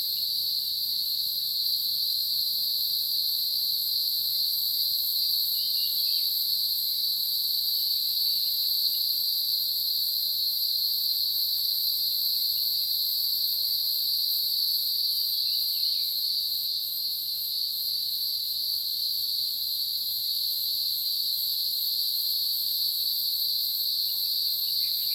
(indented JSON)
{
  "title": "投64鄉道, 桃米里Puli Township - in the morning",
  "date": "2016-06-08 05:42:00",
  "description": "in the morning, Bird sounds, Cicadas sound\nZoom H2n MS+XY",
  "latitude": "23.94",
  "longitude": "120.92",
  "altitude": "555",
  "timezone": "Asia/Taipei"
}